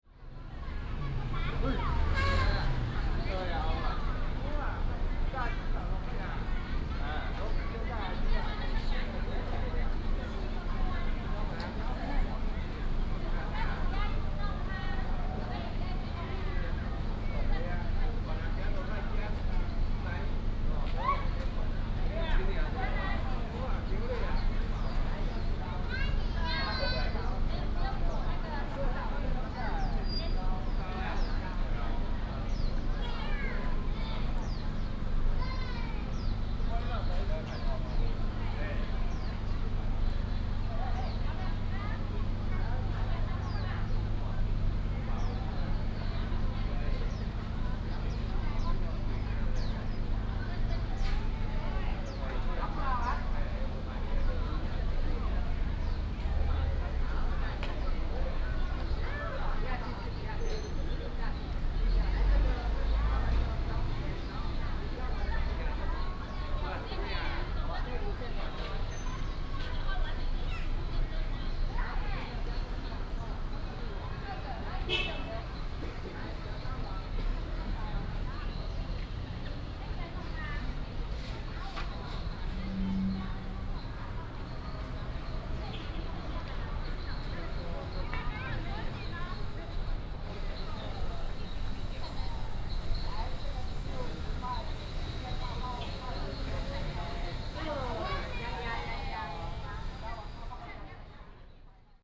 In the square of the temple, Market, traffic sound
12 August, 16:47